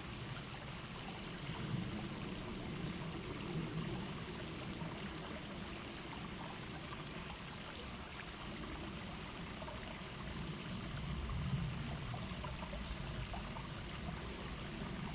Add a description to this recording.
Recording off trail crossing Owl Creek in Queeny Park